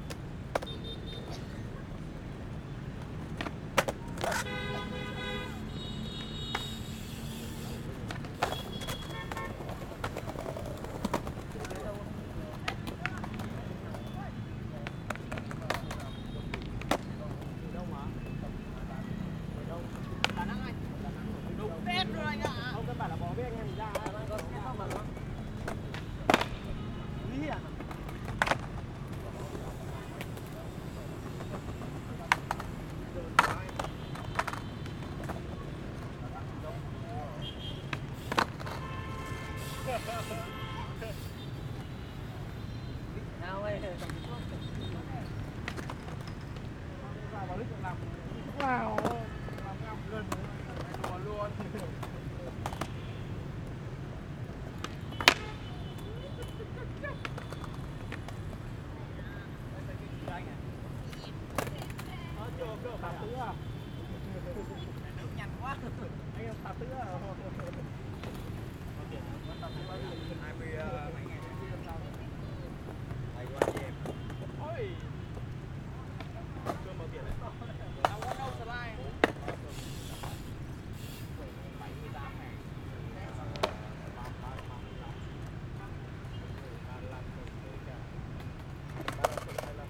SKATER IN VIETNAM, RECORDING WITH ZOOM H624
Auvergne-Rhône-Alpes, France métropolitaine, France, 19 October